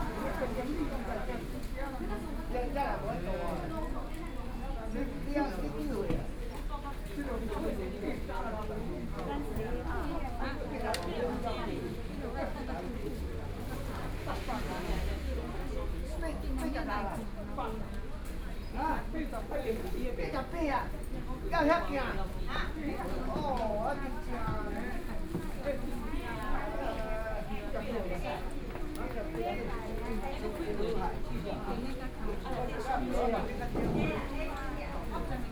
National Yang-Ming University Hospital, Yilan City - In the hospital lobby
In the hospital lobby, Old people are waiting to receive medication, Between incoming and outgoing person, Binaural recordings, Zoom H4n+ Soundman OKM II
Yilan County, Taiwan, 5 November, 08:51